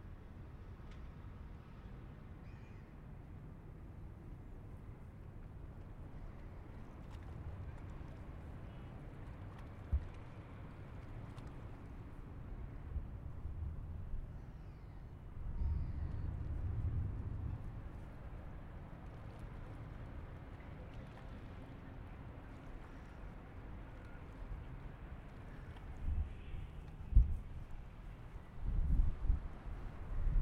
Wasted
‘‘A useful thing that is not being used is wasted. As soon as it is used or not useful it becomes no waste and a thing on its own. When a useless thing is being used anyway it creates a new waste.’’
Noord-Holland, Nederland, 4 December 2019